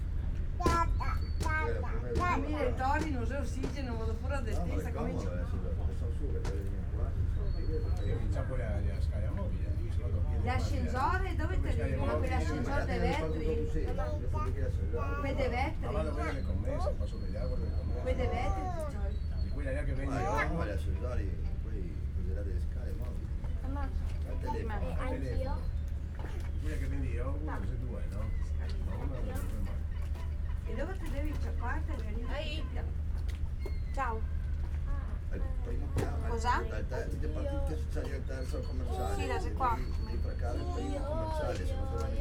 Zona Industriale, Porto Nuovo, Trieste, Italy - outside cafe ambience
coffee break in a cafe at Zona Industriale, Porto Nuovo, an area with many small businesses, stores and warehouses.
(SD702, DPA4060)